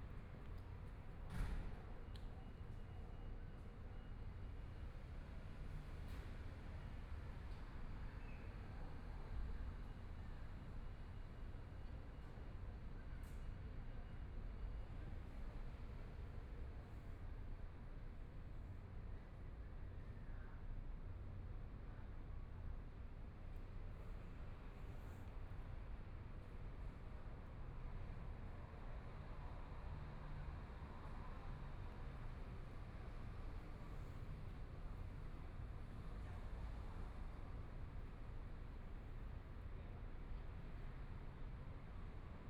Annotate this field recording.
Community-park, Sitting in the park, Traffic Sound, Motorcycle sound, Binaural recordings, ( Proposal to turn up the volume ), Zoom H4n+ Soundman OKM II